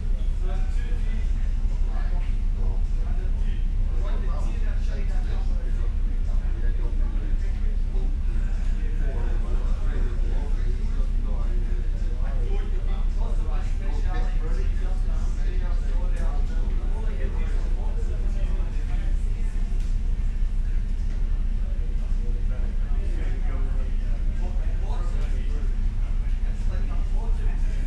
Kennecraig to Port Ellen ferry to Islay ... the cafeteria ... lavaliers mics clipped to baseball cap ...